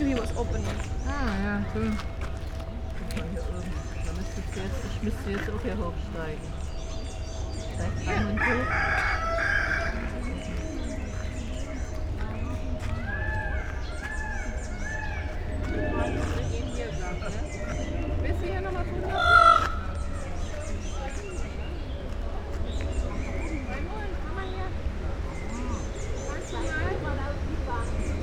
Pfaueninselchaussee, Berlin, Germany - caged and free voices
cocks and peacocks, spoken words, steps, wind in tree crowns